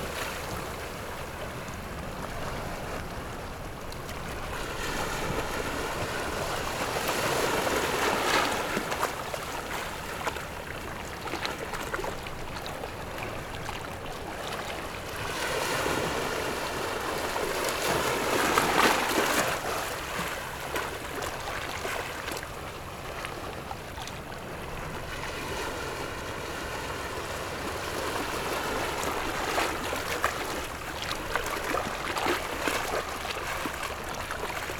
萊萊地質區, Gongliao District - waves and Rocks
Rocks and waves, Very hot weather, Traffic Sound
Zoom H6 MS+ Rode NT4